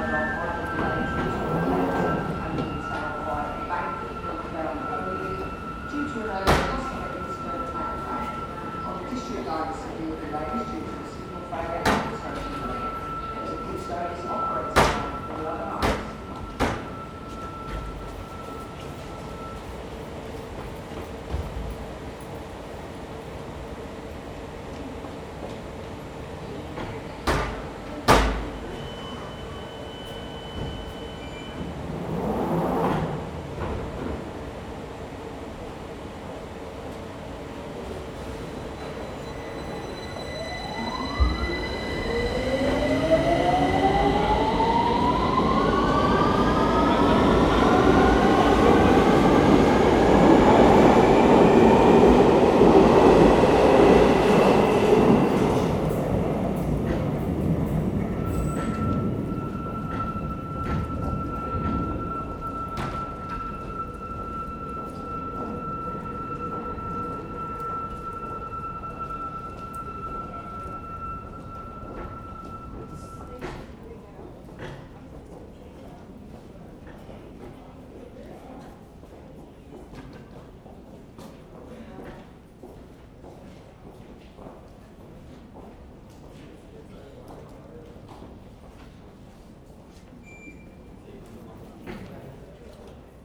I guess these whistling sounds are caused by train created winds blowing through something flute-like in the tunnel. It's impossible to see but it does correlate with the trains entering and leaving the station. Somewhat eerie. Nobody else seems to pay it any attention though.
Islington, London, UK, 8 February 2018, 12:08